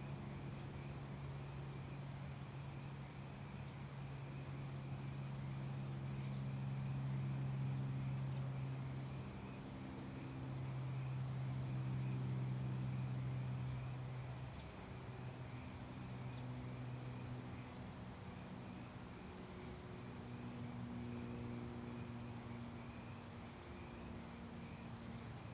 Seminary Av:Laird Av, Oakland, CA, USA - A forest on a highway
I used an H4N Pro Zoom Recorder for this field recording. I placed the recorder on a tripod nearby a creek surrounded by trees and wildlife. This location also happened to be close to a highway.